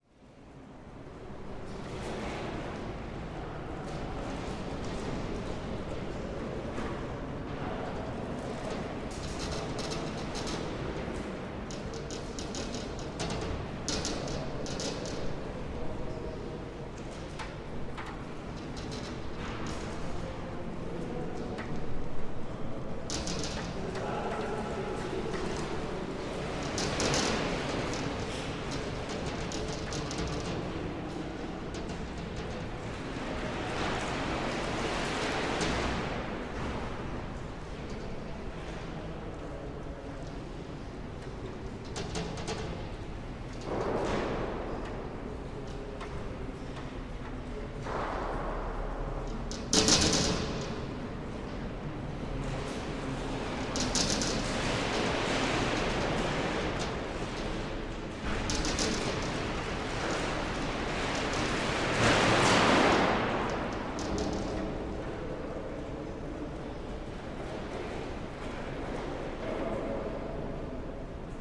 former AEG hall, Muggenhof/Nürnberg
wind blowing plastic and windows at the former AEG factory in Nürnberg